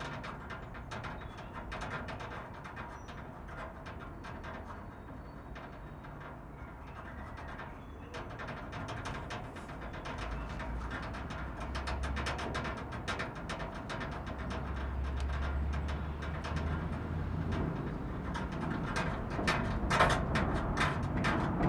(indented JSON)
{
  "title": "Wind rocking metal discarded from a building site, Údolní, Praha, Czechia - Wind rocking a large piece of metal discarded from a building site",
  "date": "2022-04-06 11:03:00",
  "description": "The old brewery at Braník is spectacular industrial building fallen into disrepair when the business closed. Fortunately, it is currently being renovated and much of it is a building site.\nBuilding materials, pieces of scaffolding are lying around. This recording is a long piece of metal guttering being rocked percussively in the wind.",
  "latitude": "50.03",
  "longitude": "14.41",
  "altitude": "203",
  "timezone": "Europe/Prague"
}